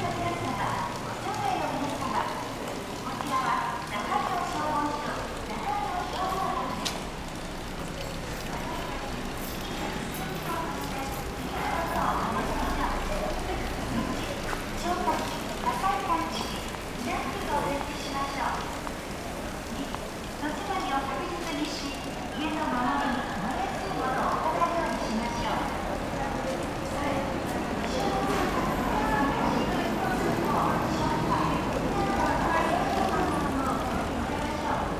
riding a bike along a roof covered market street. (roland r-07)